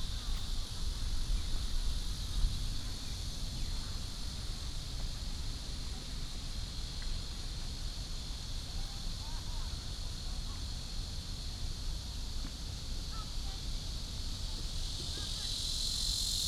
Walking in the park, Cicadas, sound of birds, Footsteps, Traffic sound
Taoyuan District, Taoyuan City, Taiwan, 15 July, ~19:00